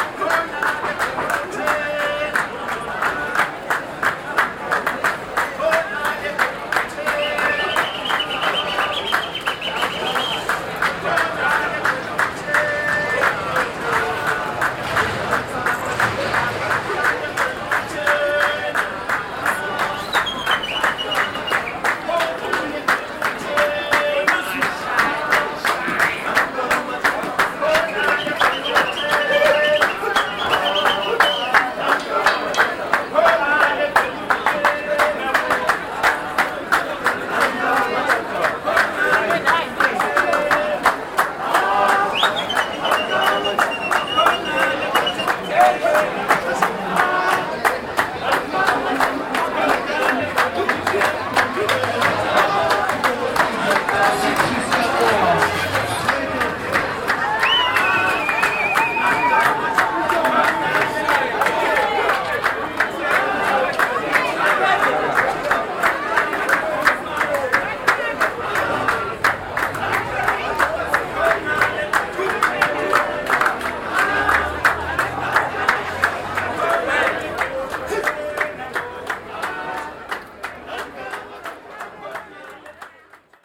Ave, Bulawayo, Zimbabwe - Radio Dialogue procession arriving
Arrival of Radio Dialogue’s procession and other guests in the celebration tent set up outside and downstairs of the studios at Pioneer House on 8th Ave in Bulawayo. A local group performing a welcome-song…
October 2012